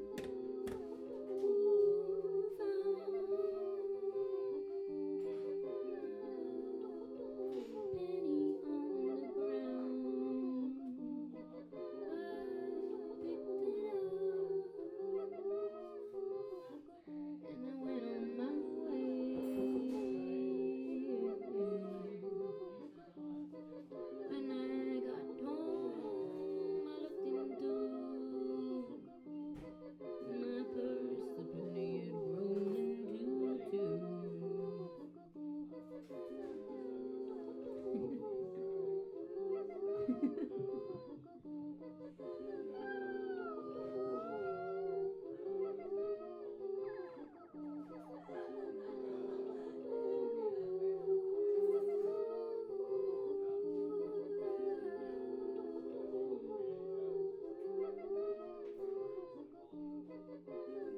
maddy singing near san pablo and alcatraz, oakland, ca - near san pablo and alcatraz, oakland, ca
field music raw maddy el rancho antioquia oakland california looper voice lovely lady lalala
Alameda County, California, United States of America, 5 May 2010